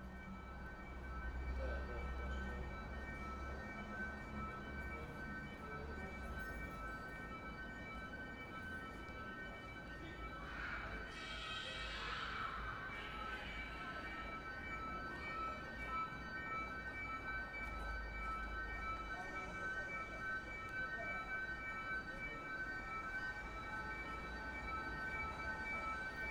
Rijeka, Croatia, ShopAlarm - StreetSundayAlarm - AWARE - Loud part from 1:30